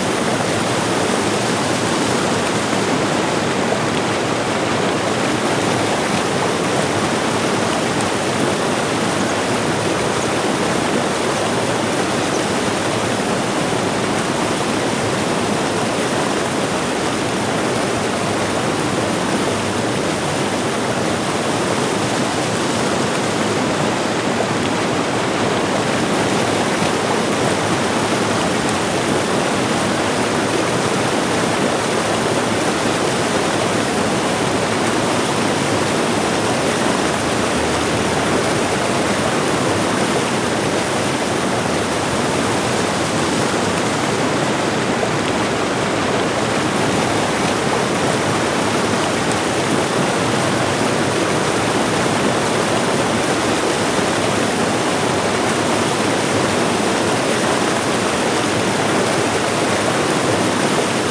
vianden, small dam
On a small dam. The sound of the water rushing down the dam wall as a small water fall. In the background a group of ducks. A dog barking in the more far distance and some cars passing by on the riverside roads.
Vianden, kleiner Damm
Auf einem kleinen Damm. Das Geräusch des Wassers, wie es die Kante wie ein kleiner Wasserfall hinabrauscht. Im Hintergrund eine Gruppe Enten. Ein Hund bellt in etwas weiterer Entfernung und einige Autos fahren auf den Uferstraßen vorbei.
Vianden, petit barrage
Sur un petit barrage. Le bruit de l’eau qui traverse le mur du barrage en formant une petite chute d’eau. Dans le fond, on entend un groupe de canards. Plus loin, on entend un chien aboyer et des voitures roulant sur les routes qui longent la rivière.
18 September 2011, ~15:00